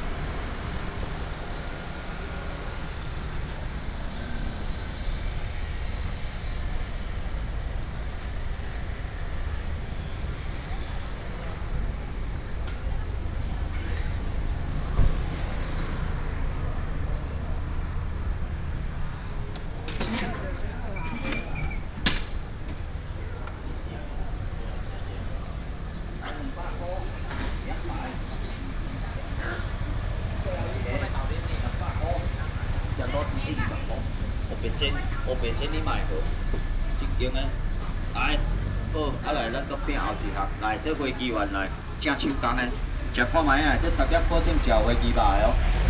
Zhongzheng Rd., Xuejia Dist., Tainan City - Traditional market block
In the market block, Fishmonger, Traditional market block, Traffic sound
Xuejia District, Tainan City, Taiwan, 15 May 2019, 08:32